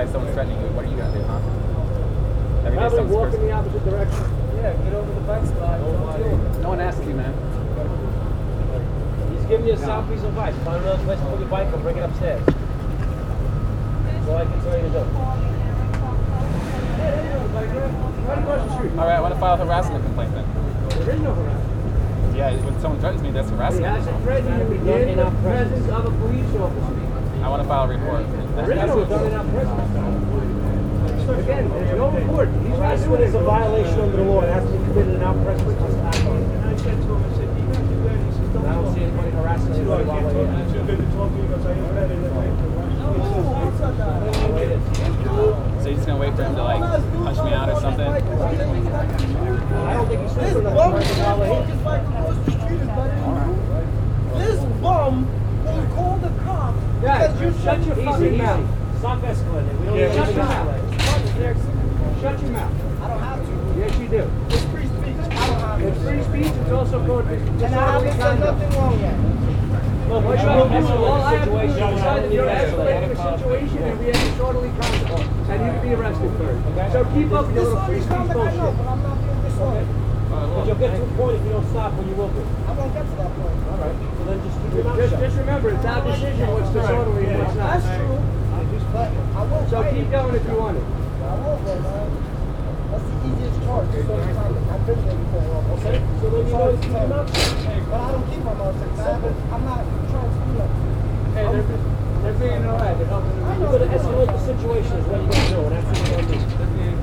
Brooklyn, Bedford Avenue.
A conversation about a bike parking spot.
By JM Charcot.